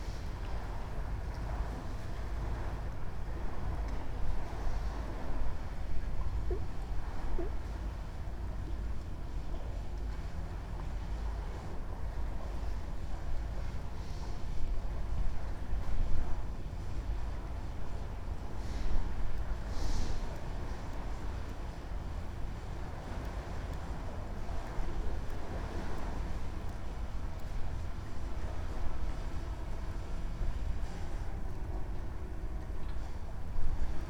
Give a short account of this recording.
ambience of a fallen cave filled with water. man who previously jumped into the cave (water if located about 5m down) swimming about in the water with a camera, randomly talking to to camera, presumably filming a review of the place. Another man flying a drone and filming the other guy. Drone getting in and out of the cave. (roland r-07)